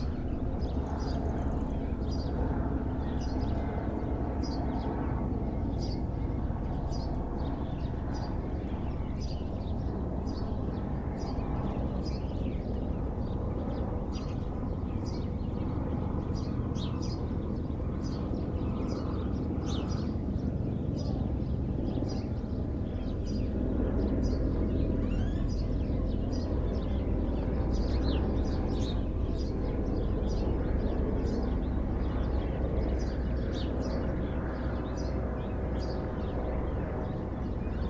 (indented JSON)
{
  "title": "Rue Leconte De Lisle, Réunion - TOURISME À LA RÉUNION.",
  "date": "2020-02-16 07:34:00",
  "description": "48 HÉLICOPTÈRES ET 16 ULM CE MATIN.\nVoir aussi",
  "latitude": "-21.14",
  "longitude": "55.47",
  "altitude": "1182",
  "timezone": "Indian/Reunion"
}